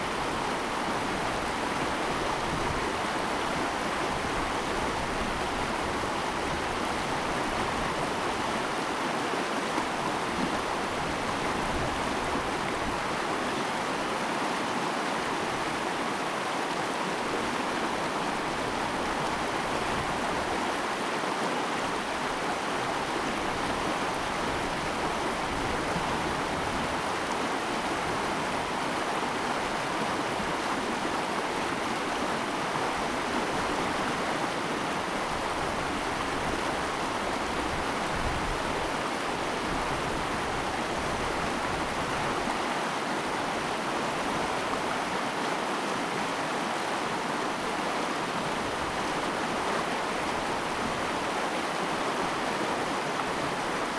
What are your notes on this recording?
In the river bed, traffic sound, Stream sound, Zoom H2n MS+XY